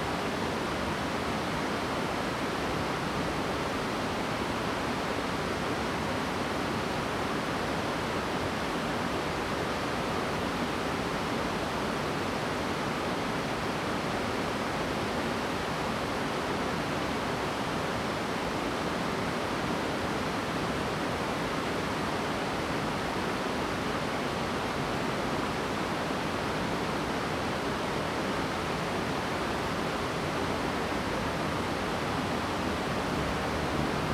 瀑布路, 烏來里, Wulai District - Sound of water and bird
Facing the waterfall, Traffic sound, Birds call
Zoom H2n MS+ XY
New Taipei City, Taiwan, 5 December 2016, ~08:00